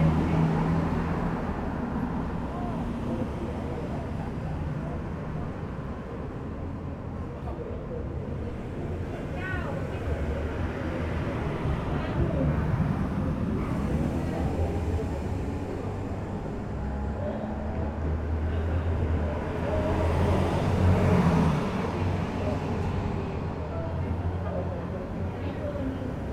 {"title": "neoscenes: Bondi Road bus stop at night", "date": "2009-11-21 14:57:00", "latitude": "-33.89", "longitude": "151.27", "altitude": "71", "timezone": "Australia/NSW"}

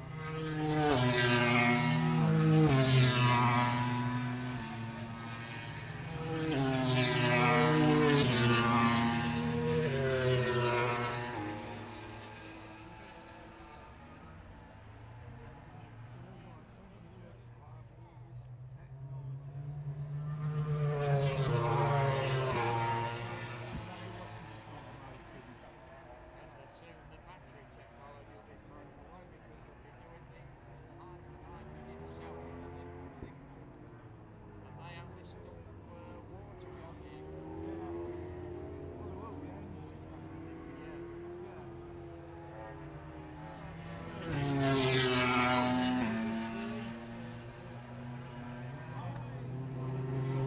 {"title": "Towcester, UK - british motorcycle grand prix 2022 ... moto grand prix ...", "date": "2022-08-05 09:55:00", "description": "british motorcycle grand prix 2022 ... moto grand prix free practice one ... dpa 4060s clipped to bag to zoom h5 ... wellington straight adjacent to practice start ...", "latitude": "52.07", "longitude": "-1.01", "altitude": "157", "timezone": "Europe/London"}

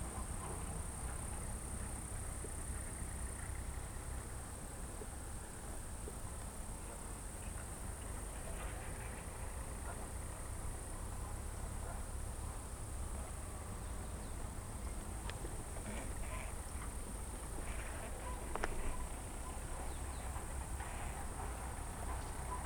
{"title": "Unieszewo, Pole-Las - Distant village from forest", "date": "2009-09-14 17:32:00", "description": "Vilage sounds heard from far distance at begining of forest.", "latitude": "53.71", "longitude": "20.30", "altitude": "120", "timezone": "Europe/Warsaw"}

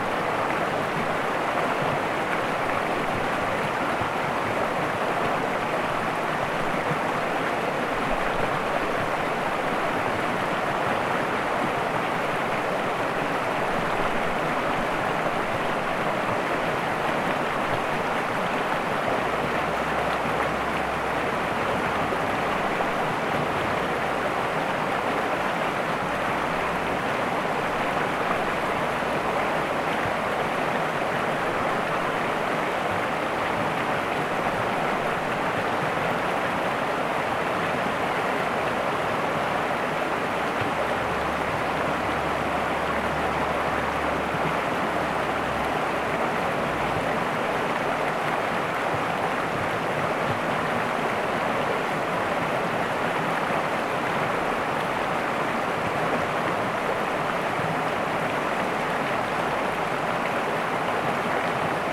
{"title": "Chaos du Chéran, Sent. de la Passerelle, Cusy, France - Le Chéran", "date": "2022-08-04 11:20:00", "description": "Au bord du Chéran la rivière des Bauges qui se jette dans le Fier à Rumilly. Débit minimum en cette période de sécheresse.", "latitude": "45.77", "longitude": "6.04", "altitude": "434", "timezone": "Europe/Paris"}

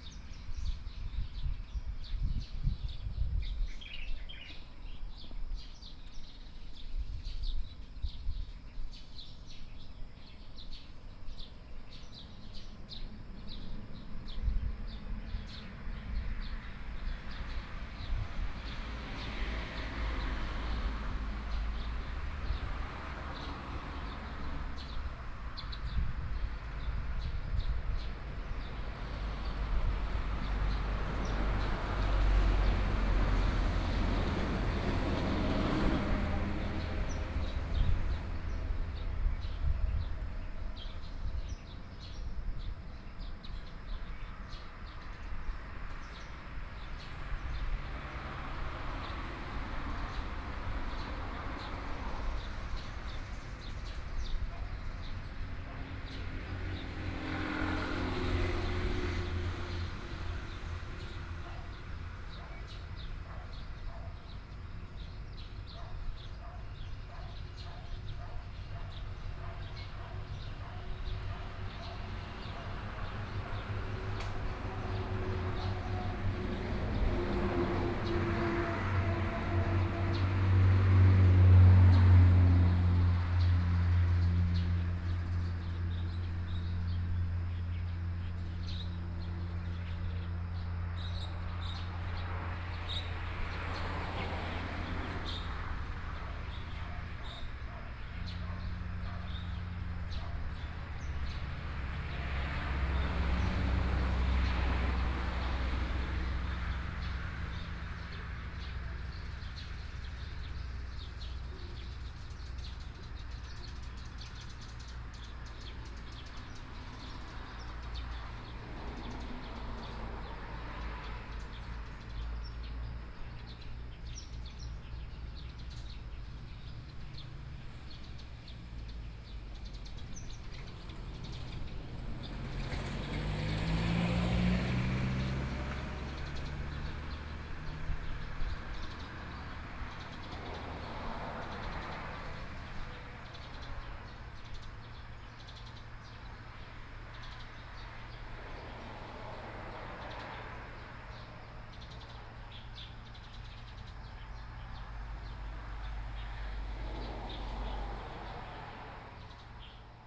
{"title": "美農村, Beinan Township - Birdsong", "date": "2014-09-07 07:27:00", "description": "In the morning, Birdsong, Traffic Sound", "latitude": "22.84", "longitude": "121.09", "altitude": "194", "timezone": "Asia/Taipei"}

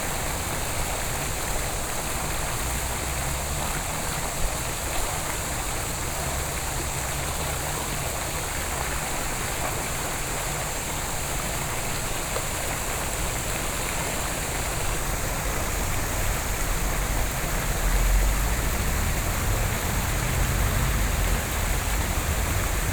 {"title": "碧湖公園, Taipei City - The sound of water", "date": "2014-07-09 15:59:00", "description": "The sound of water, Traffic Sound\nSony PCM D50+ Soundman OKM II", "latitude": "25.08", "longitude": "121.58", "altitude": "11", "timezone": "Asia/Taipei"}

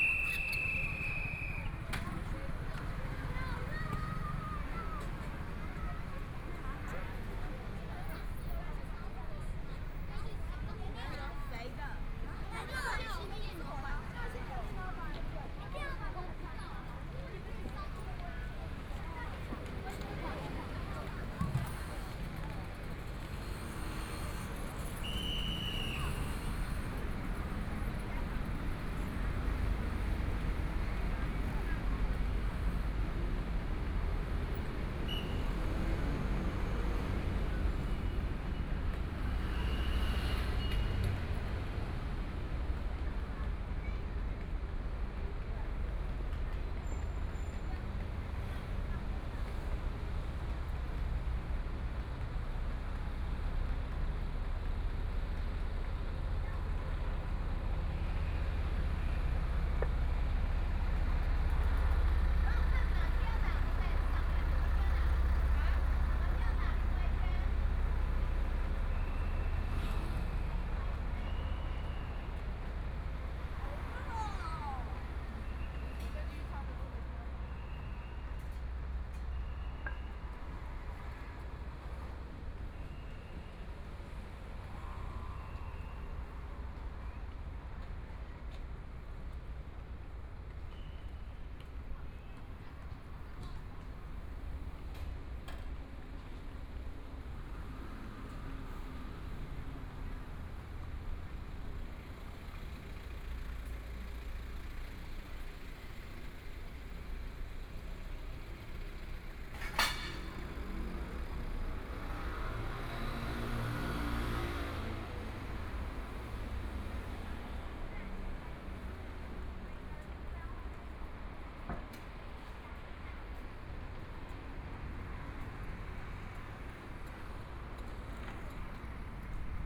{"title": "吉林國小, Taipei City - Walking along the outside of the school", "date": "2014-02-17 16:12:00", "description": "Walking along the outside of the school, Traffic Sound\nPlease turn up the volume\nBinaural recordings, Zoom H4n+ Soundman OKM II", "latitude": "25.05", "longitude": "121.53", "timezone": "Asia/Taipei"}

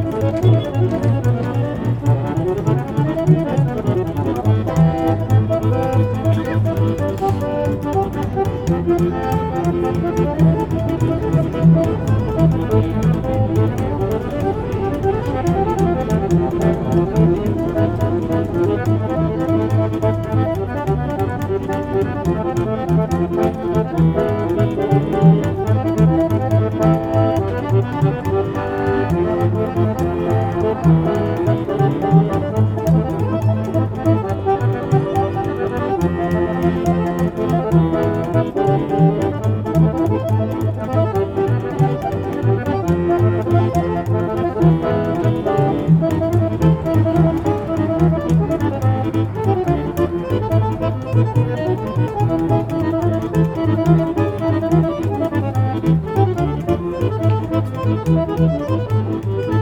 {"title": "Via dei Fori Imperiali, Roma RM, Italy - Street band", "date": "2018-02-16 18:20:00", "description": "Street band, pedestrians\nGroupe de rue, passants", "latitude": "41.89", "longitude": "12.48", "altitude": "22", "timezone": "GMT+1"}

